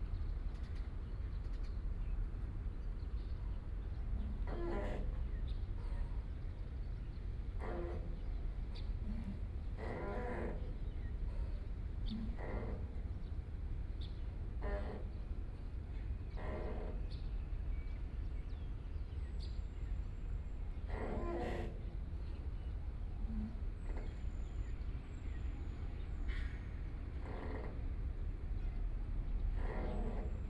鹽埕區, Kaohsiung City - In the dock
In the dock, Birds singing
Kaohsiung City, Yancheng District, 真愛碼頭, 14 May, 05:47